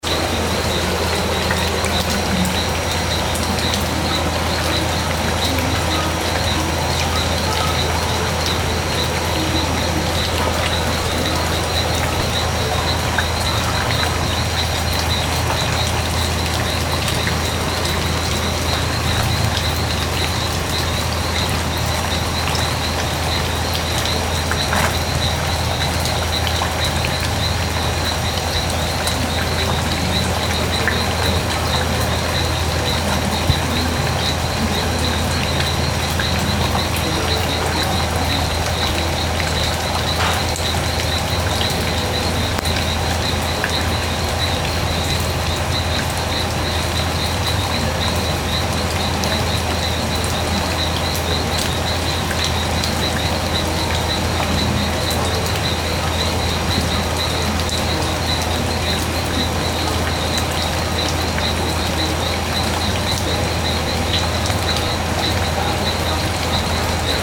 Caballo Cocha - Caballo cocha -Loreto -Perú lluvia y naturaleza
Naturaleza cerca a rio con grillos y llovizna
7 November 2012, ~21:00